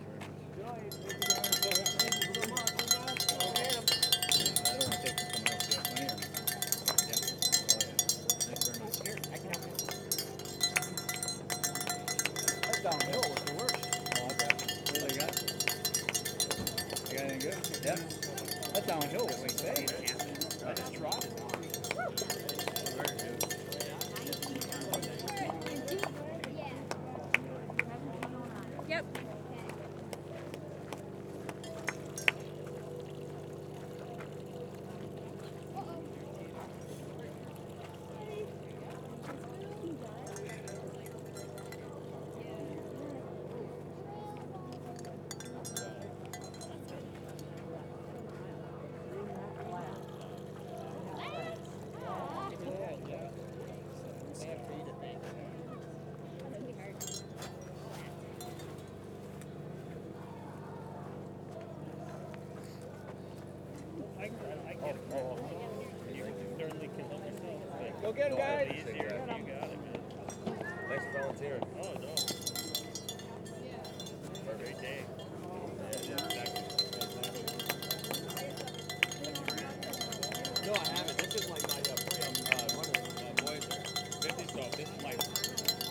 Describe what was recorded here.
Sounds of Aid Station at the Zumbro Ultra Marathon. The Zumbro Ultra Marathon is a 100 mile, 50 mile, 34 mile, and 17 mile trail race held every year at the Zumbro River Bottoms Management area. Recorded with a Zoom H5